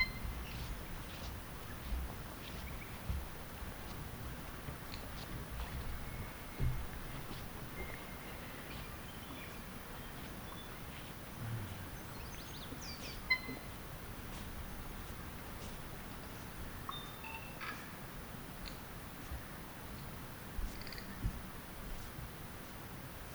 Lake Daniell, Lewis Pass - Dinner time at Lake Daniell, Spring
Late afternoon at the Manson Nicholls Memorial Hut. Birdlife with occasional footsteps and domestic sounds.
Maruia, New Zealand, 29 October 2017